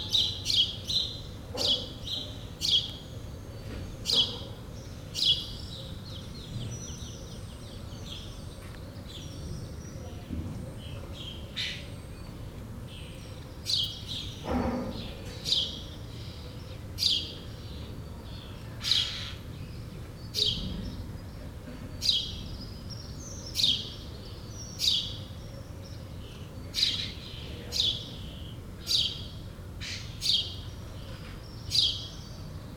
Morning ambience on the center of a small village, a very quiet morning.
Ars-en-Ré, France, 21 May 2018, 08:05